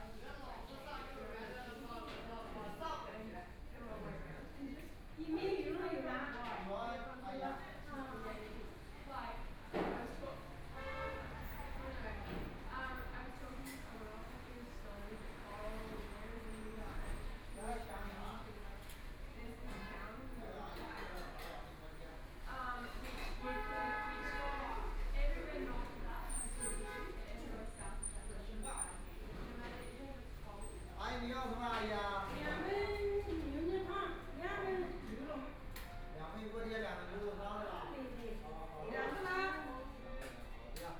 中華人民共和國上海黃浦區 - In the restaurant
In the restaurant, Binaural recording, Zoom H6+ Soundman OKM II
Shanghai, China